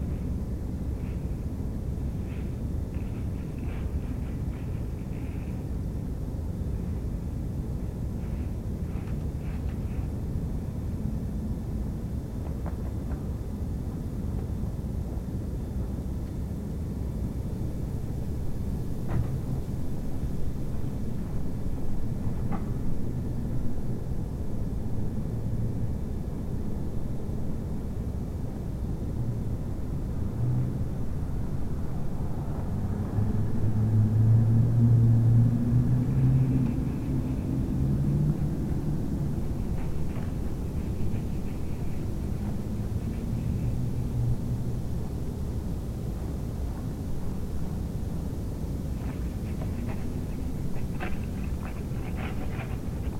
In Russia many people celebrate New Year’s Eve in the Julian calendar. It's like the final afterparty of the New Year celebration. We call it Old New Year. Like "Happy Old New Year", we say. This time it was accompanied by a heavy and beautiful snowstorm.
ORTF, Pair of AE5100, Zoom F6.